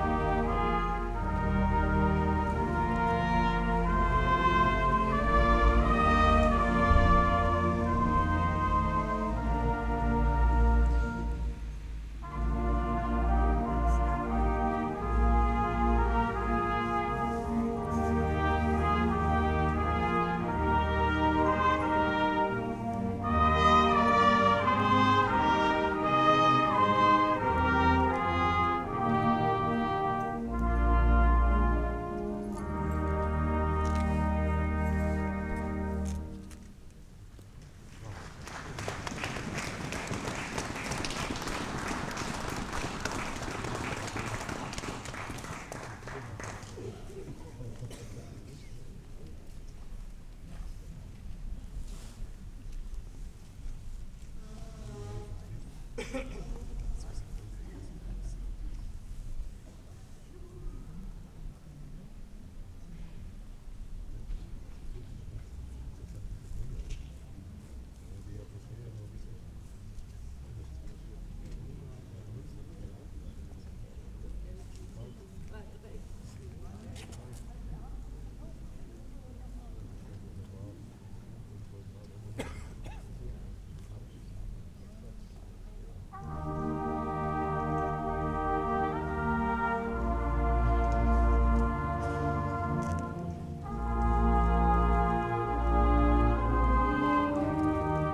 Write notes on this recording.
Weihnachtsblasen 2009. Wie im Bilderbuch: Es lag Schnee, und eine recht große Menge Menschen versammelte sich unter dem Rathausbalkon, auf dem eine ca. zehn Mitglieder starke Blaskapelle Weihnachtslieder spielte. Trotz der eisigen Temperaturen bekamen die Musiker einen ganz ordentlichen Sound hin, die Arrangements waren wirklich sehr nett. Manche der anwesenden Zuhörer sangen auch mit – sehr feierlich ...